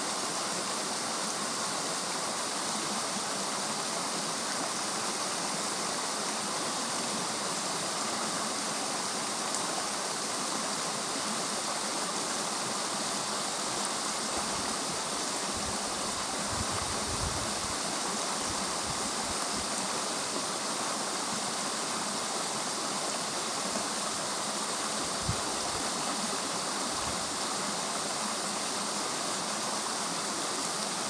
Kikutstua, Nordmarka, Oslo, Norvegia - Kikutstua, Nordmarka: brook in the forest
Kikutstua, Nordmarka, Oslo: brook in the forest.